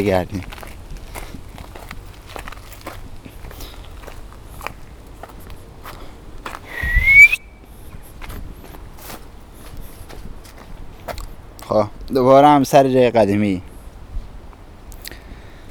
AfricanTide, Igglehorst, Dortmund - Arash greets the German Spring...
Dortmund, Germany, 12 May 2017